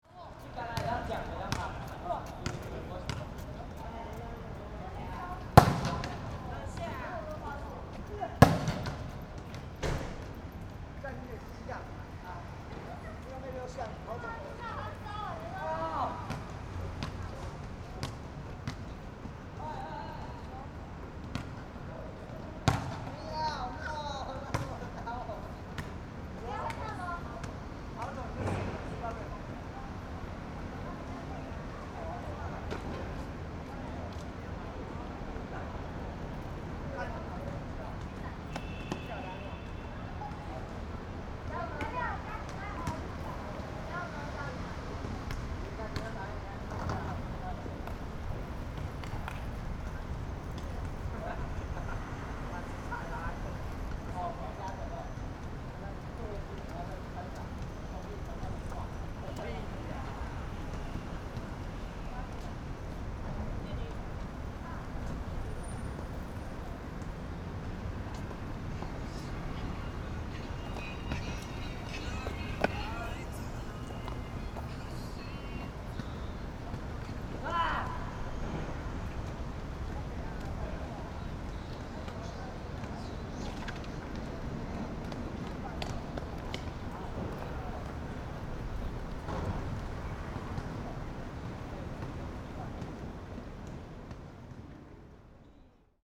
Students are playing basketball, Sony PCM D50